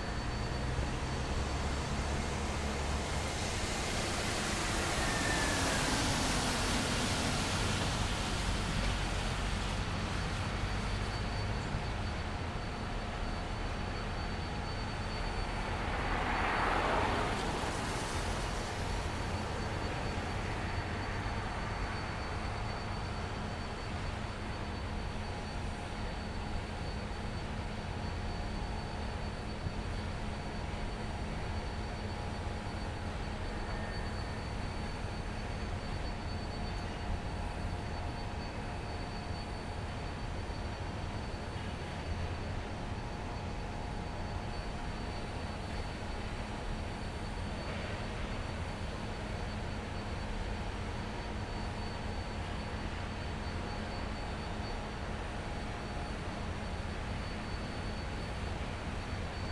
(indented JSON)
{
  "title": "Zamenhofstraat, Amsterdam, Nederland - Wasted Sound Albermale",
  "date": "2019-11-06 11:49:00",
  "description": "Wasted Sound of a Factory",
  "latitude": "52.39",
  "longitude": "4.93",
  "altitude": "2",
  "timezone": "Europe/Amsterdam"
}